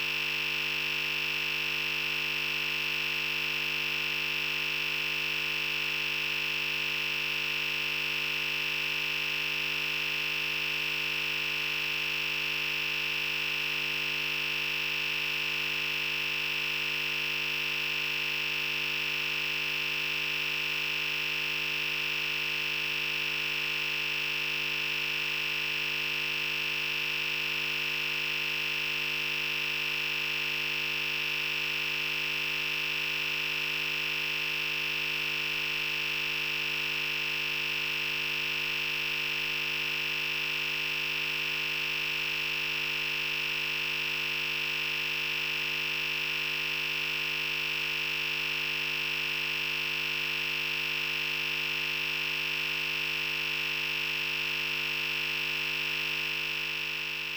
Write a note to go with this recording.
electric field captured with ElectroSluch3